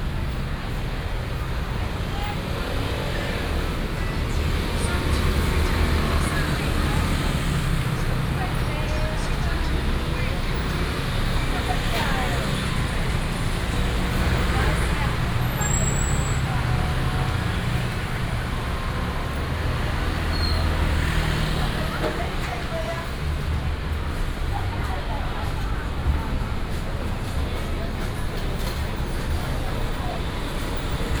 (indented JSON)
{
  "title": "Gonghe Rd., East Dist., Chiayi City - Walking in the traditional market",
  "date": "2017-04-18 09:47:00",
  "description": "Walking in the traditional market, Traffic sound, Many motorcycles",
  "latitude": "23.48",
  "longitude": "120.46",
  "altitude": "42",
  "timezone": "Asia/Taipei"
}